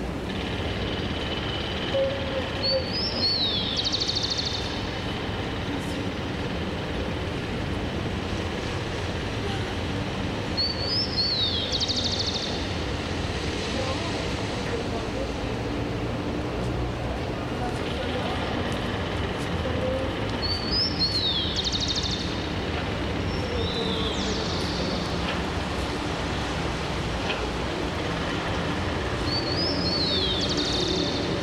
Cra., Suba, Bogotá, Colombia - Soundscape Conjunto Mirador de Suba (balcony)
In the present soudscape that belongs to the balcony of a building, we found -Tonic or Fundamental Sounds-: trafic in morning hours (10:00 a.m.) formed by cars, motorcycles and buses that cross the puddles of the streets; we heard this atmosphere so dark and melancholic that happens when it stops raining it mixes with the repetitive construction sound, and this urban sounds set and the cold wind contrast with the sound signals: the vigorous energy of birdsong and the hits against the ground of metal tools.
Because we are from a perspective a little away of the street, we can find certain sound marks: we perceive voices almost whispered and people behind the balcony, also it's possible to filter someone sweeping.
All this indentifies that we are recording a city soundscape, but from a residential. I consider that in itself, all of the city scape with the construction and the whistles of birds are too a sound mark of the place.
This was recorded with a cellphone.
Región Andina, Colombia, 17 May